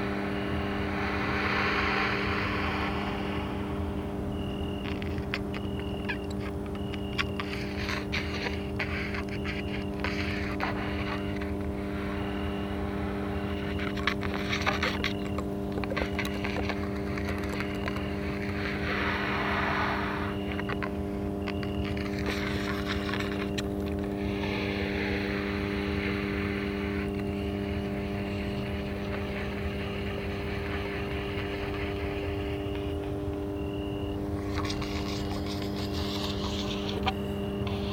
Maribor, Slovenia - Free clarinet jamming with cricket and transformer
No processing, just raw sounds from abused clarinet along with a cricket and electric transformer station and some traffic.